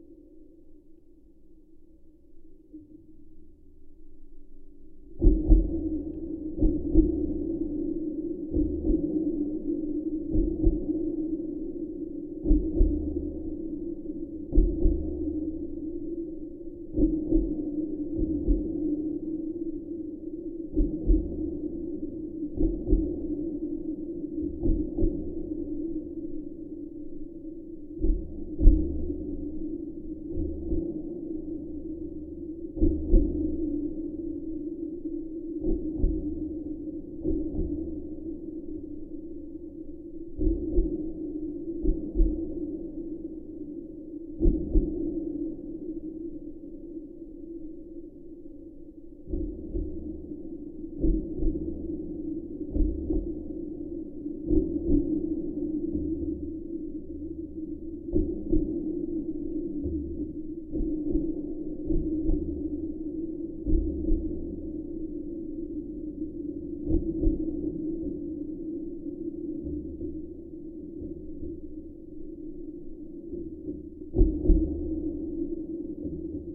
Kaunas, Lithuania, bridge vibration
Geophone on the holding construction of Vytautas The Great Bridge